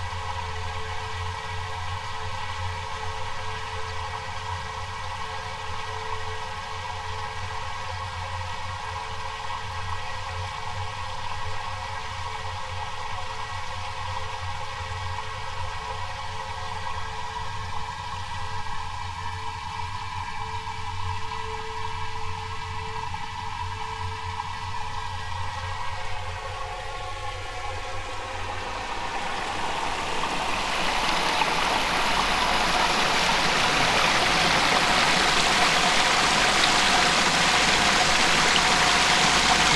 circular street lamp trapped between two ponds
1 January 2014, 14:50